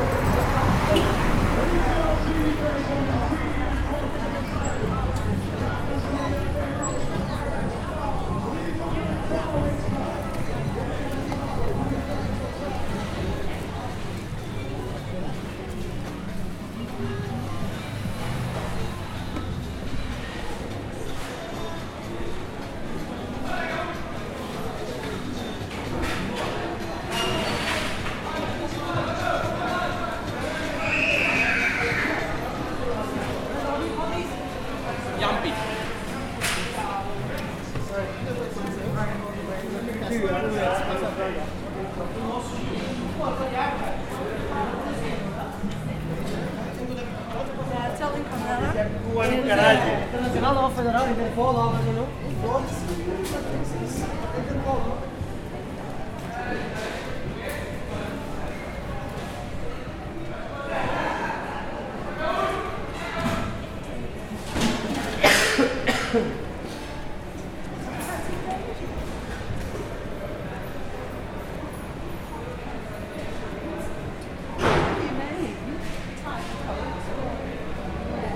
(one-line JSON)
{"title": "Brixton Village Market, London - Brixton Village/ by Marco de Oliveira", "date": "2012-12-24 15:30:00", "description": "Walking through Brixton Village on an early afternoon. Brixton has rich of cultures, so you can hear different languages throughout the recording. Butchers, florists, fishmongers, restaurants, cafés, music stands, clothes stands, grocers are just some of commerce active in this place.", "latitude": "51.46", "longitude": "-0.11", "altitude": "14", "timezone": "Europe/London"}